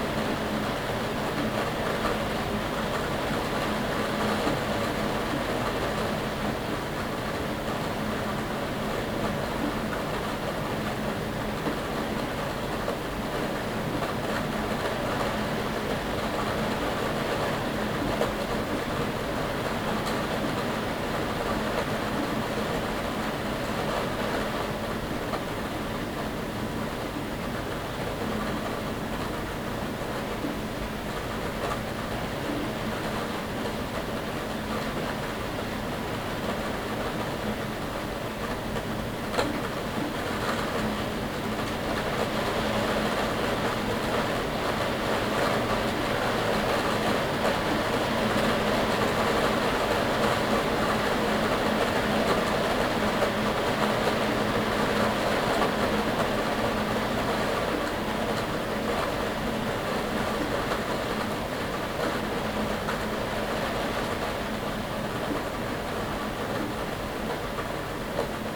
Ares, A Coruña, Spain - Rain at Night (Ares, Northwest of Spain)
I woke up around four in the morning with the sound of the rain. I put my sound recorder on the night stand and I recorded the rain until it stopped.
13 August 2015